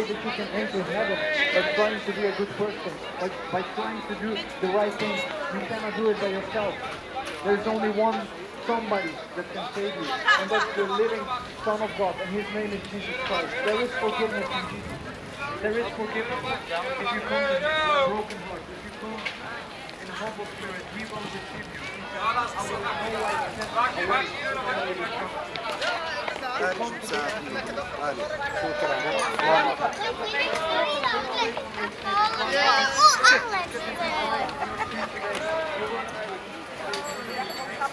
Westnieuwland, Rotterdam, Netherlands - Blaak market. Street preacher
I recorded this during the street market on Saturdays. I walked about 20 meters around Markthal